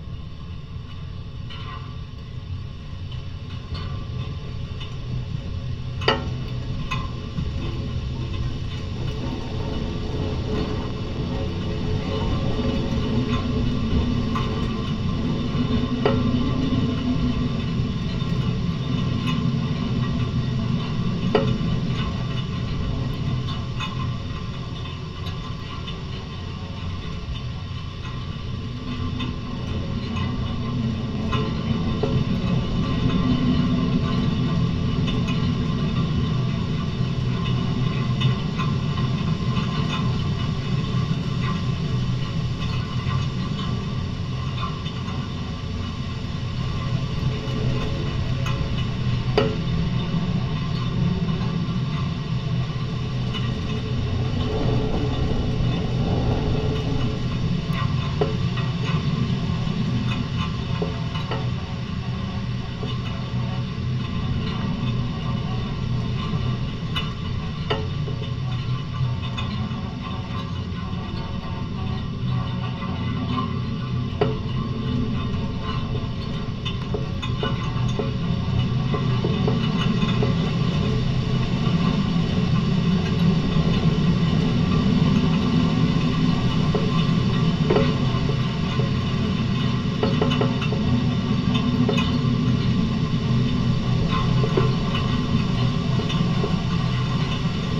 Dual contact microphone recording of a electricity pole. Wind and clanging of electrical wires can be heard resonating through the pole.